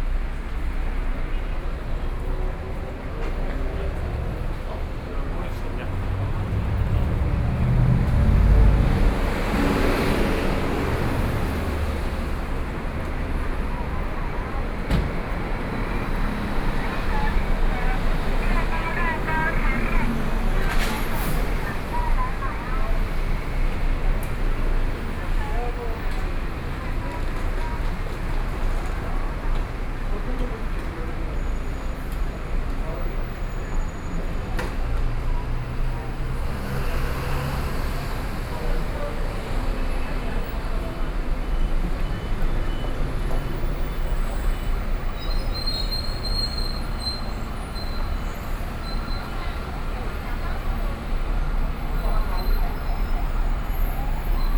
Zhongxiao W. Rd., Taipei - walking in the Street
From Control Yuan to Taipei Station, Binaural recordings, Sony PCM D50 + Soundman OKM II
Taipei City, Taiwan, 31 October 2013, 18:11